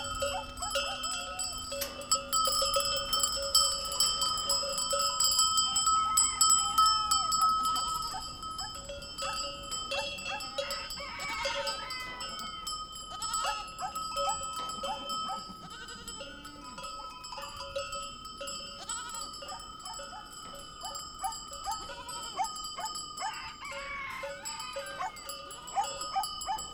Montargil, Ponte de Sor Municipality, Portugal - goats and dogs-alentejo
Goat bells and dogs barking, Foros do Mocho, Montargil, mono, rode NTG3 shotgun, Fostex FR2 LE